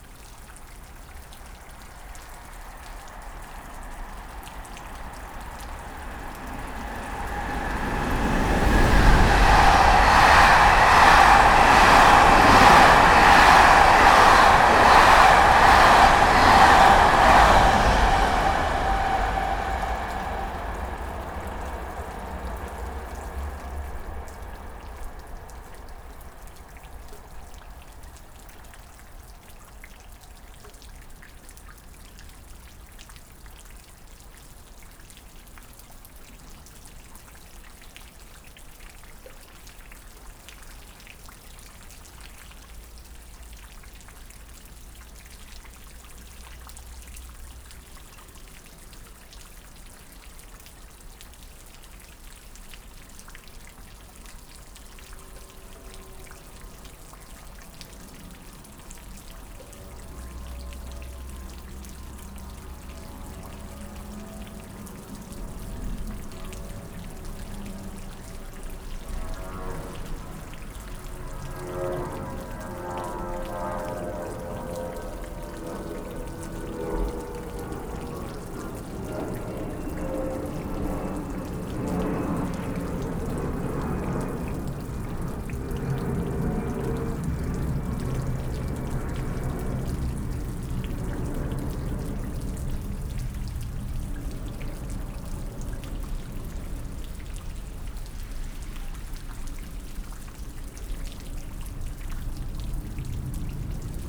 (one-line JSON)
{"title": "Brussels, Belgium - Constant rain, fast trains, low planes", "date": "2016-02-09 11:11:00", "description": "Haren is an old village on the border of Brussels, which has undergone huge changes in recent decades. A beautiful 16th century farmhouse can still be seen, there are fields and houses with large gardens. Once it was famous as a chicory growing area. Now it is surrounded by railways, motorways and the international airport. Controversy rages over the building of a new prison here. On this day it is raining again, as it has for the last 3 weeks.", "latitude": "50.89", "longitude": "4.42", "altitude": "31", "timezone": "Europe/Brussels"}